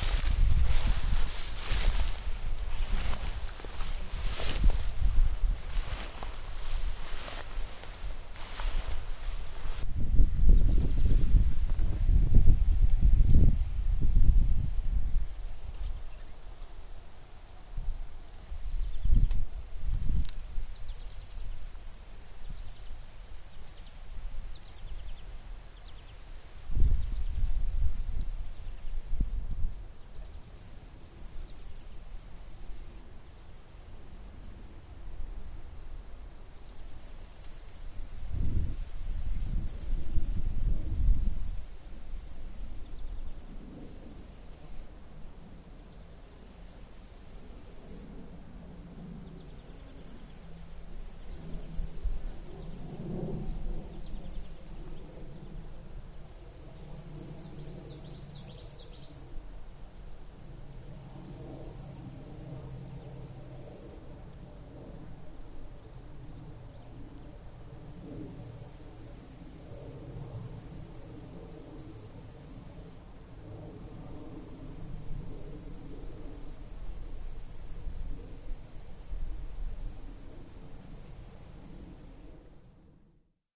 Grass Lake Sanctuary - Western Wetlands
This is the edge of the western wetlands at GLS, an area which people never explore..
MI, USA, 18 July 2010, 01:59